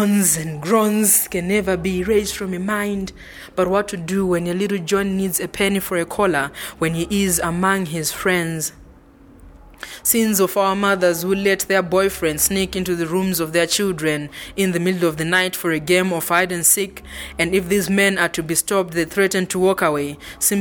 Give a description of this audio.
Linda Gabriel, “Sins of our Mothers…”, for these recordings, we decided to move to the large backyard office at Book Cafe. Evenings performances picked up by then and Isobel's small accountant office a little too rich of ambience .... some were broadcast in Petronella’s “Soul Tuesday” Joy FM Lusaka on 5 Dec 2012: